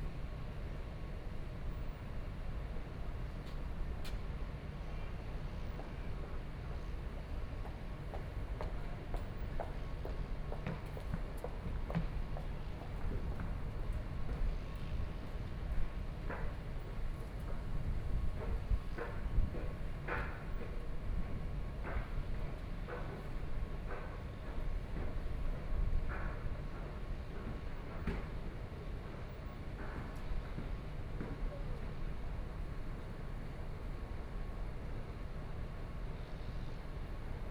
Xinjiangwancheng station, Shanghai - In the subway station entrance
In the subway station entrance, Traffic Sound, Beat sound construction site, Binaural recording, Zoom H6+ Soundman OKM II